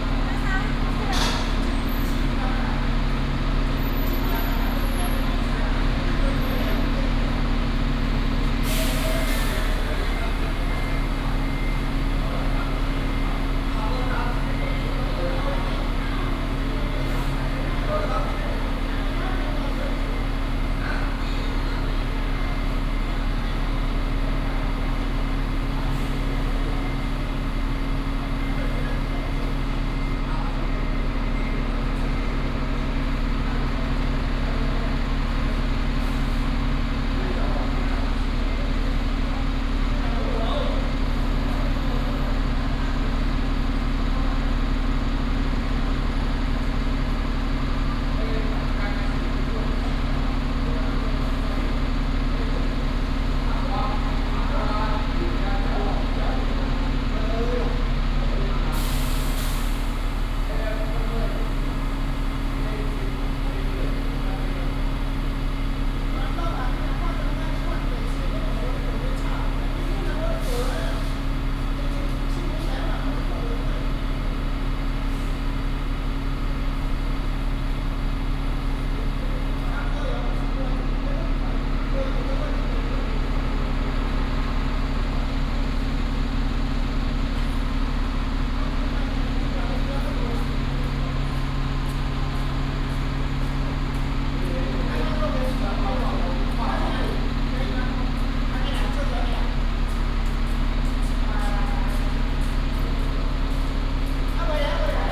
Old bus terminal, traffic sound
Binaural recordings, Sony PCM D100+ Soundman OKM II
Yunlin County, Taiwan, February 2018